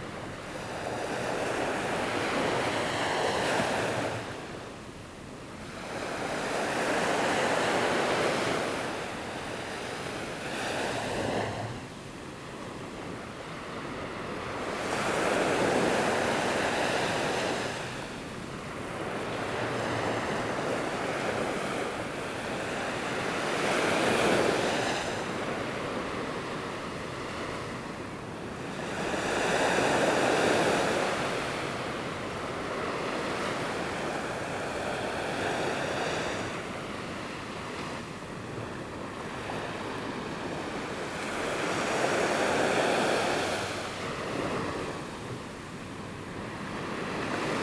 淺水灣海濱公園, 三芝區後厝里, New Taipei City - the waves
Aircraft flying through, Sound of the waves
Zoom H2n MS+H6 XY
15 April 2016, 07:20